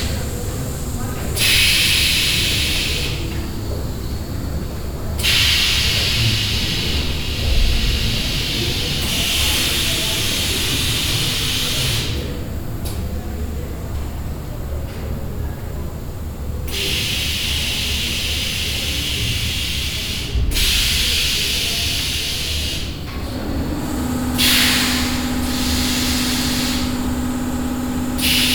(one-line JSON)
{"title": "Ehrenfeld, Köln, Deutschland - wohn-bar - passagen exhibtion - pneumatic lamps", "date": "2014-01-18 19:30:00", "description": "At an exhibition room of the wohn-bar during the passagen 2014. The sound of a pneumatic neon light installation.\nsoundmap nrw - art spaces, topographic field recordings and social ambiences", "latitude": "50.95", "longitude": "6.91", "timezone": "Europe/Berlin"}